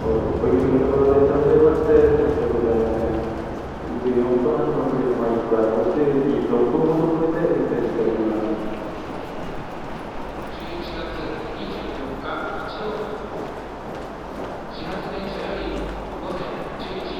tokyo metro - location lost
subway voices, steps ...
19 November, 18:23